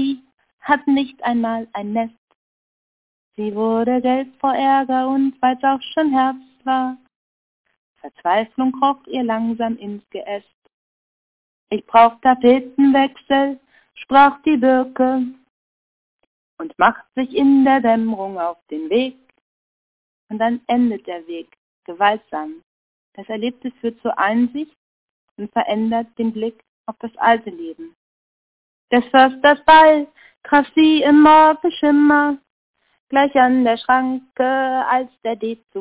Tapetenwechsel - Tapetenwechsel 25.03.2007 20:20:06
Berlin, Germany, 25 March 2007